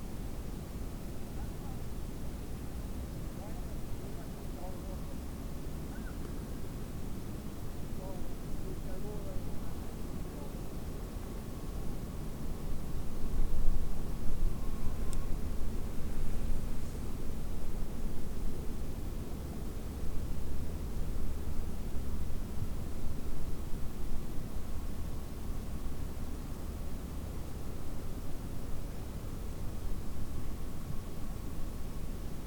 On the World Listening Day of 2012 - 18th july 2012. From a soundwalk in Sollefteå, Sweden. Some fishing from boats and the opposite shore (1 people in the boat and three people on the shore, fishes with a net in the river Ångermanland, in swedish this old traditional way of fishing in the river is called "dra not" in Sollefteå. WLD